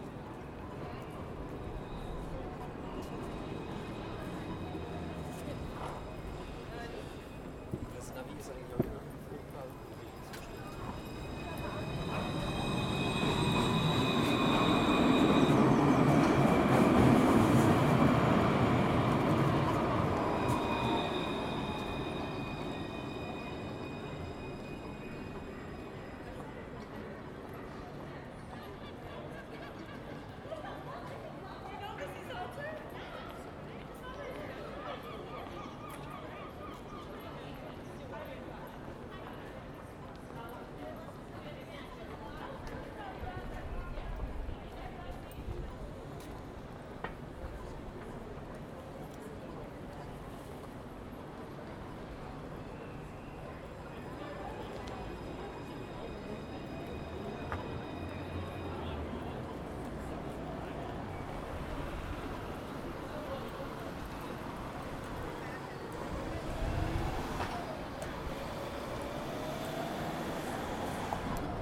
North City, Dublin, Ireland - Saint Patrick's before parade
Sound walks around Dublin before and after the world wide known Saint Patrick's parade.
This first recording was taken two hour before Saint Patrick's parade the parade on the single day in which an unmotorized Dublin reveals a whole different soundscape experience
17 March